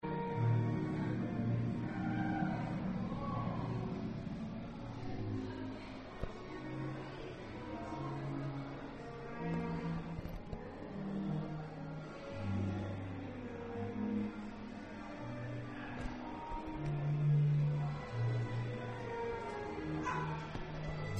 Supamolli, Jessnerstraße - Vorraum Supamolli, Jessnerstraße
Berlin, Germany, 7 December 2008, 02:57